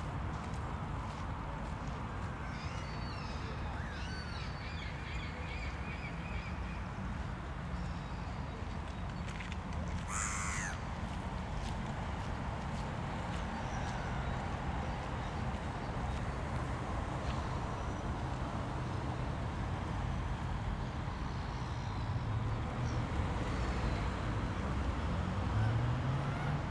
Heemraadssingel, Rotterdam, Netherlands - Birds at Heemraadssingel
A very active group of different types of birds. It is also possible to listen to passersby walking on the wet gravel.